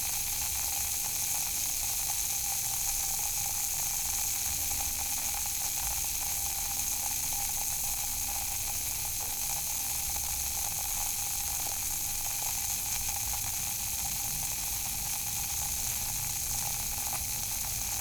Poznan, Jezyce district, at the office - ginseng tab
everyday office routine - dissolving a ginseng tab in a glass of water.
Poznań, Poland